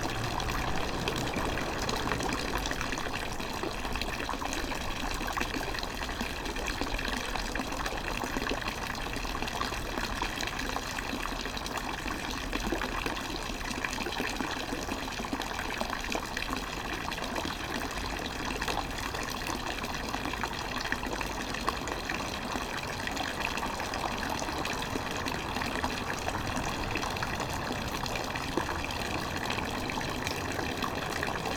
Frauenplan, Weimar, Deutschland - fountain and distant drone
Weimar, small fountain at Frauenplan square. Also a distant drone of unclear origin can be heard.
(Sony PCM D50)
Weimar, Germany, 27 January 2016